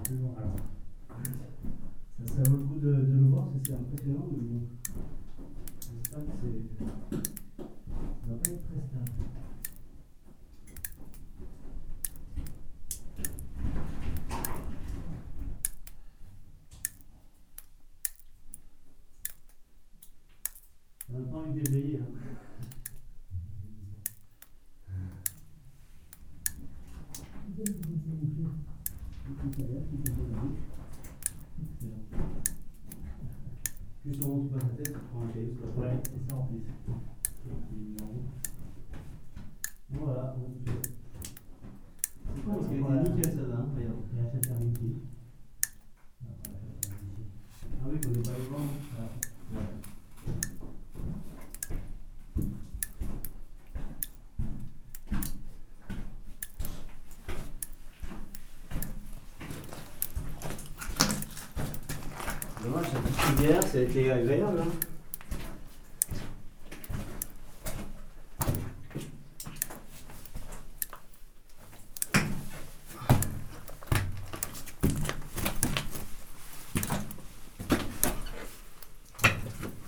{
  "title": "Saint-Martin-le-Vinoux, France - Mine drops",
  "date": "2017-03-29 15:00:00",
  "description": "In an underground cement mine, drops are falling from an inclined tunnel.",
  "latitude": "45.22",
  "longitude": "5.73",
  "altitude": "1004",
  "timezone": "Europe/Paris"
}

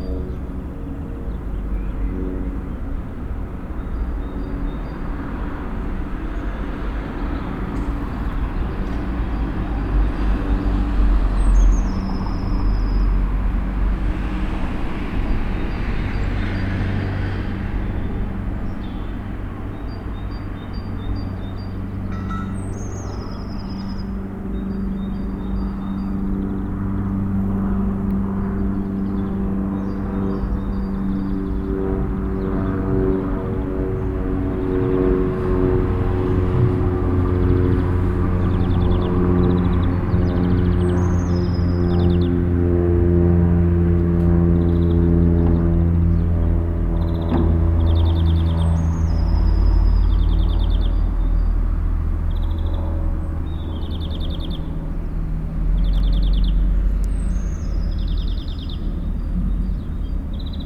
{
  "title": "Lange Str., Hamm, Germany - two bells competing",
  "date": "2015-04-12 19:00:00",
  "description": "twice a day a mix of (at least) two church bells can be heard in an ever changing never identical mix",
  "latitude": "51.67",
  "longitude": "7.80",
  "altitude": "65",
  "timezone": "Europe/Berlin"
}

